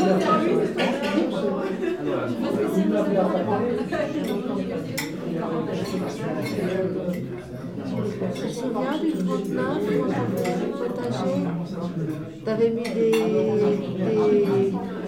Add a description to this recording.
In a restaurant, called "comme chez vous", which means you're "like at home".